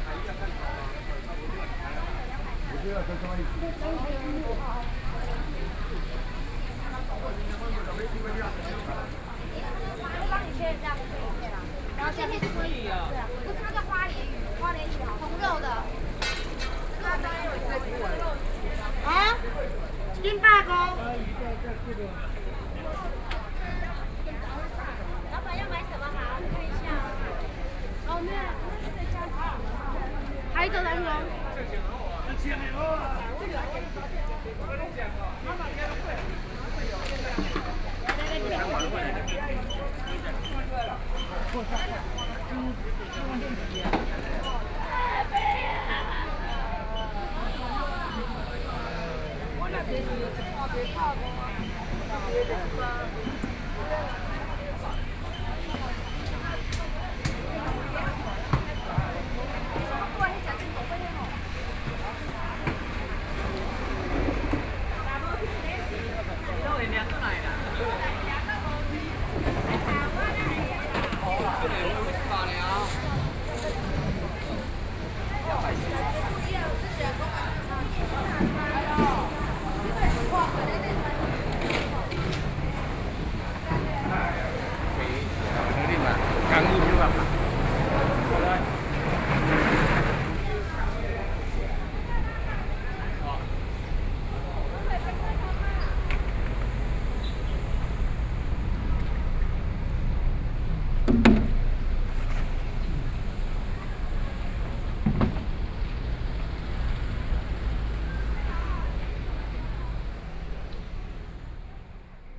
Next to the pier, Through a variety of small factories, Into the Fish Market, Traffic Sound, Hot weather
Suao Township, Yilan County, Taiwan